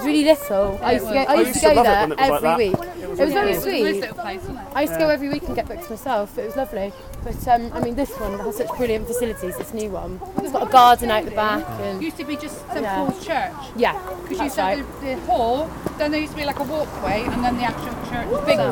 Efford Walk Two: Efford library - Efford library
Plymouth, UK